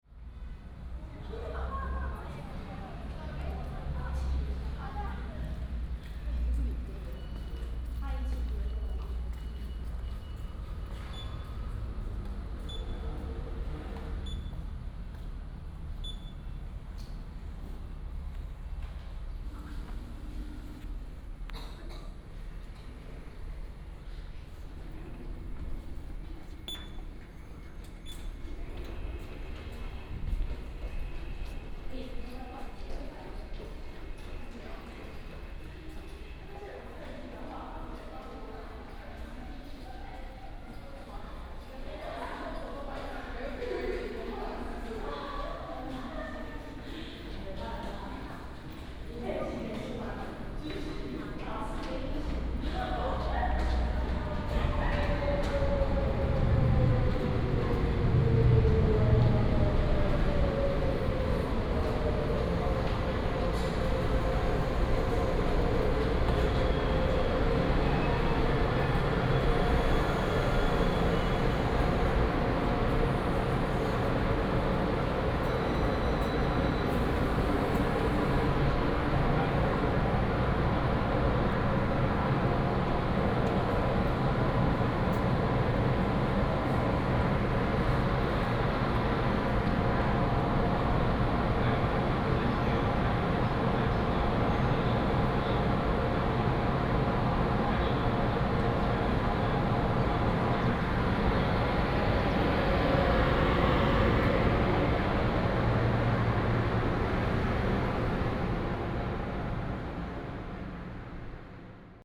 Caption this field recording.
From the station hall, Through the underground road, Walk to the station platform